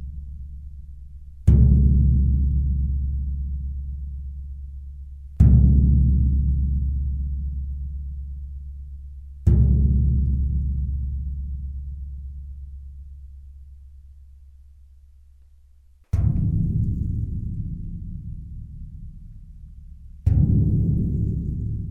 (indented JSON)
{
  "title": "Moyeuvre-Grande, France - Doors",
  "date": "2016-11-12 15:05:00",
  "description": "Playing with 3 different metallic doors, in the underground mine. Doors are coupled because it's an access to 3 tunnels beginning from here.",
  "latitude": "49.25",
  "longitude": "6.05",
  "altitude": "215",
  "timezone": "Europe/Paris"
}